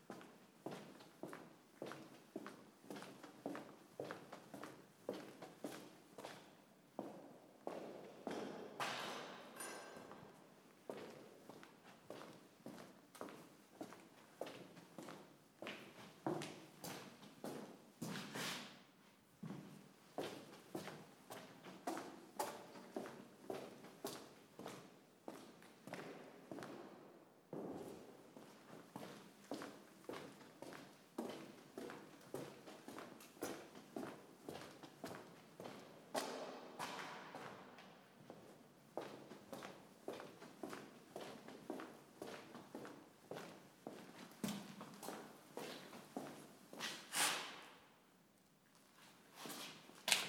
Inside & outside of new Buildings Under Construction, University Of Rijeka
Rijeka, Croatia, 25 October 2009